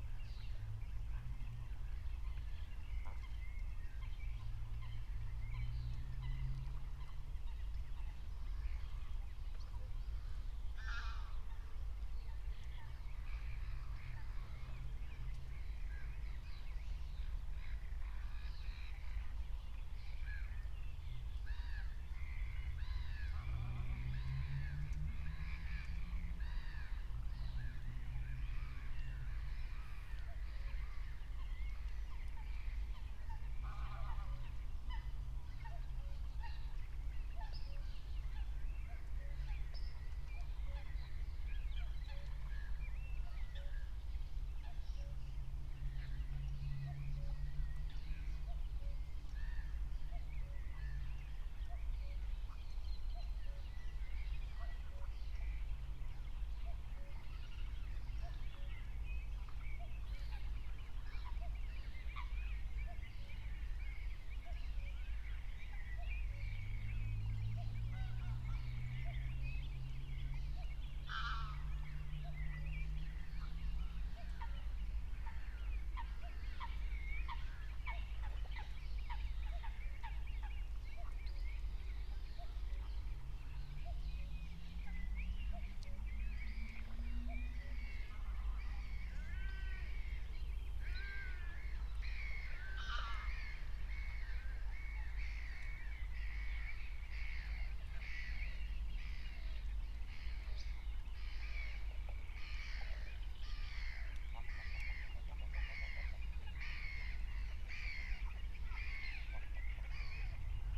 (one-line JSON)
{"date": "2021-06-26 20:51:00", "description": "20:51 Berlin, Buch, Moorlinse - pond, wetland ambience", "latitude": "52.63", "longitude": "13.49", "altitude": "51", "timezone": "Europe/Berlin"}